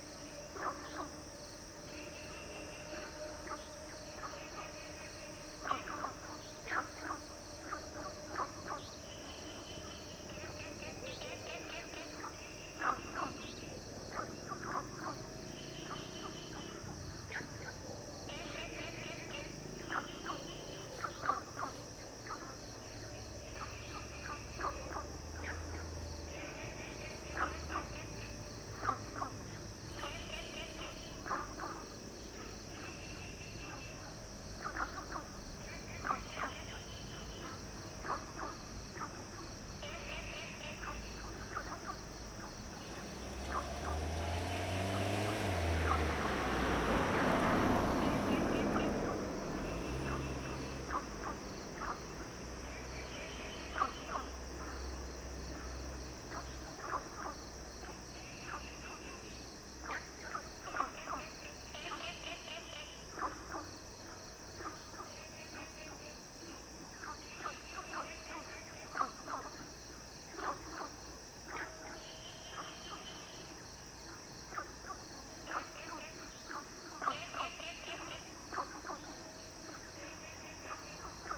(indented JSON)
{
  "title": "TaoMi River, Puli Township - Frogs sound",
  "date": "2015-04-29 21:31:00",
  "description": "Dogs barking, Frogs chirping\nZoom H2n MS+XY",
  "latitude": "23.94",
  "longitude": "120.93",
  "altitude": "458",
  "timezone": "Asia/Taipei"
}